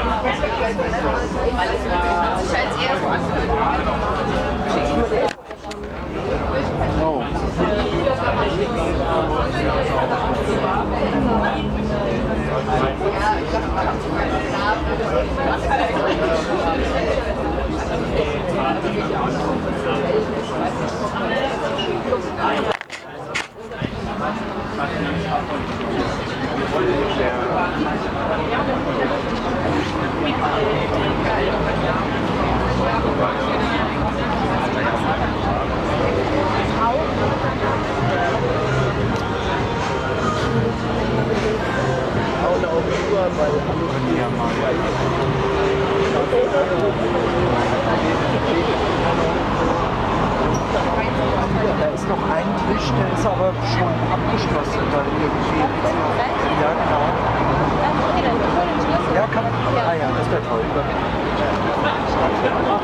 Brückenstraße, Mannheim - Summernight in Mannheim, Alte Feuerwache
Muddling ourselves through all the other guests, we finally could catch some places in this open-air-cafe. The we ordered our drinks in this warm summernight.
13 August 2016, 21:49